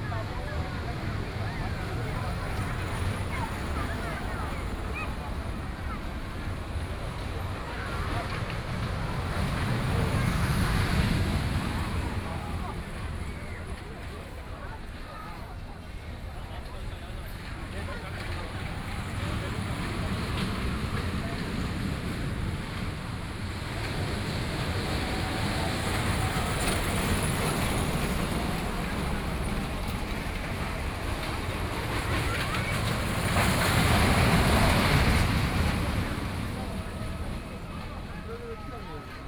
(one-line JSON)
{"title": "People's Park, Shanghai - in the park", "date": "2013-11-23 16:50:00", "description": "Mechanical sound Recreation Area, The play area in the park, Crowd, Cries, Binaural recording, Zoom H6+ Soundman OKM II", "latitude": "31.23", "longitude": "121.47", "altitude": "7", "timezone": "Asia/Shanghai"}